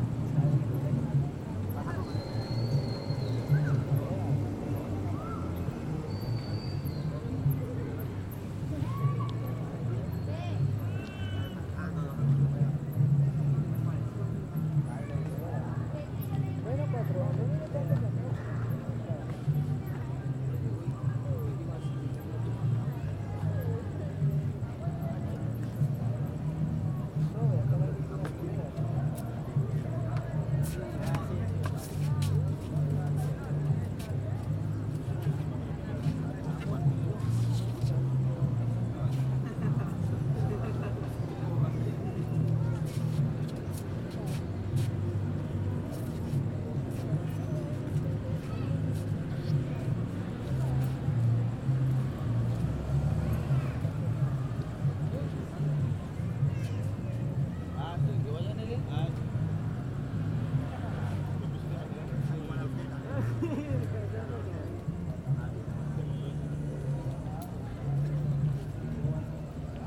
GRabaciòn en la plaza central.